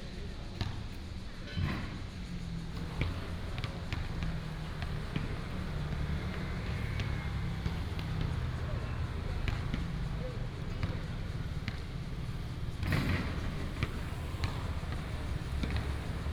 Shanghai Rd., Pingzhen Dist. - Basketball court
Basketball court, Traffic sound